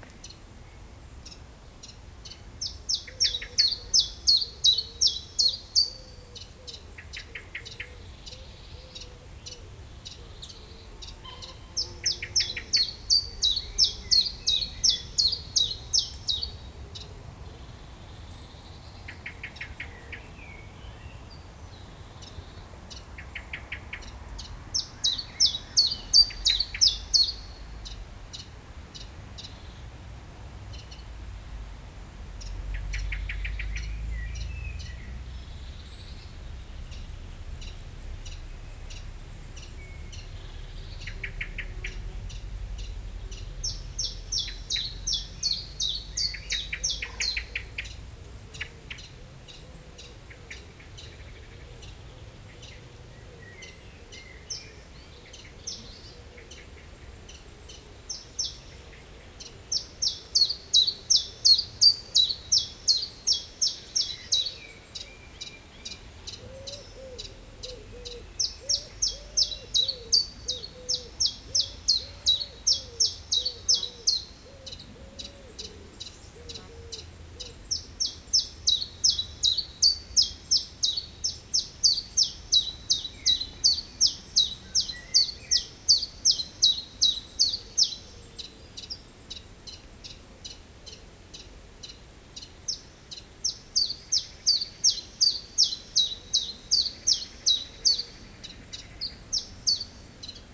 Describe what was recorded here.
Near a pond, the song of a Common Chiffchaff, a very common bird here. It's a lovely bird singing during spring and summer times. You can also hear a pony hardly coughing three times, and at the backyard, these birds [french name and english name] : Pouillot véloce (Common Chiffchaff) - tou tou ti tou tou ti, Merle (Blackbird), Poule d'eau (Common Moorhen), Colvert (Mallard), Buse variable (Common Buzzard), Corneille (Carrion Crow).